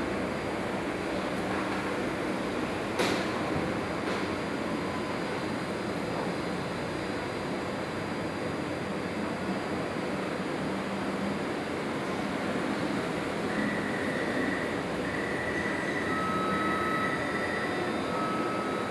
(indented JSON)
{
  "title": "Taipei, Taiwan - In the MRT platforms",
  "date": "2012-10-31 21:24:00",
  "latitude": "25.12",
  "longitude": "121.51",
  "altitude": "13",
  "timezone": "Asia/Taipei"
}